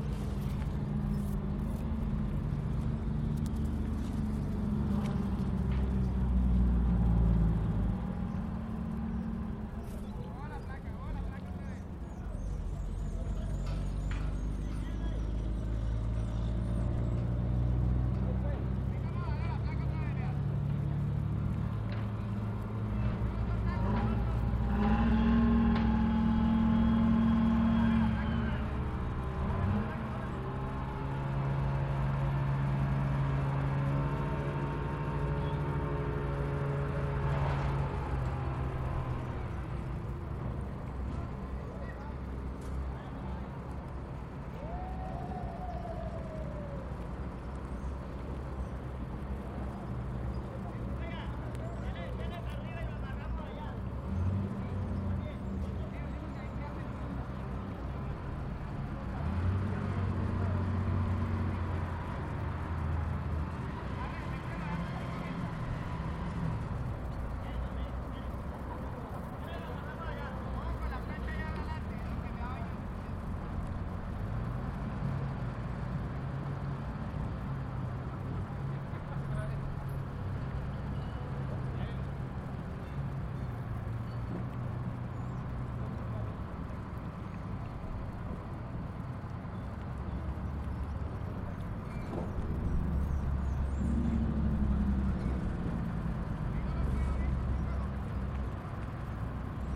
Puerto López, Meta, Colombia - Rio metica
A cargo ship is being parked on the riverside of Metica's river.
For a better audio resolution and other audios around this region take a look in here:
José Manuel Páez M.